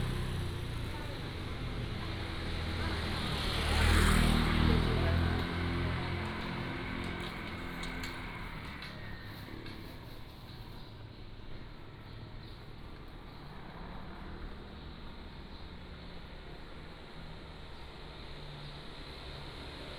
Minzu Rd., Jincheng Township - Walking in the Street
Walking in the Street, Traffic Sound
福建省, Mainland - Taiwan Border, 4 November 2014